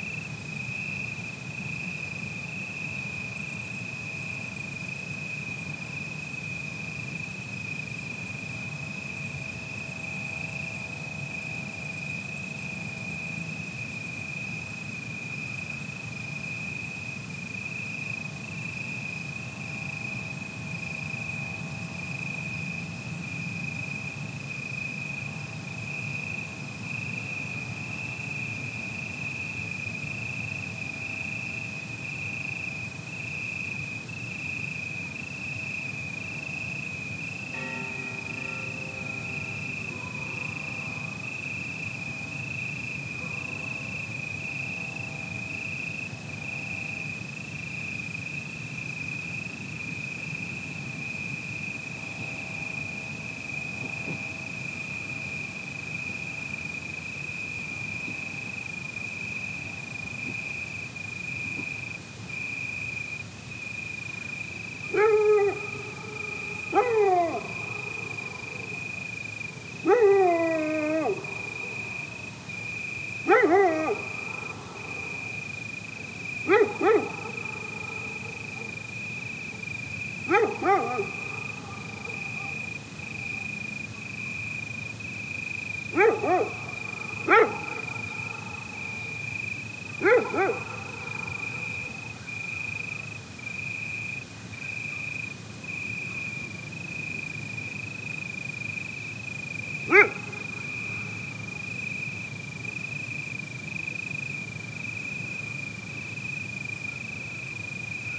SBG, Camí de Rocanegre - Noche
Paisaje nocturno donde los insectos son los protagonistas con sus incesantes altas frecuencias. Tráfico ocasional desde la cercana carretera de Vic, aullidos de algunos perros solitarios y las siempre puntuales campanadas del reloj.
St Bartomeu del Grau, Spain, 11 August 2011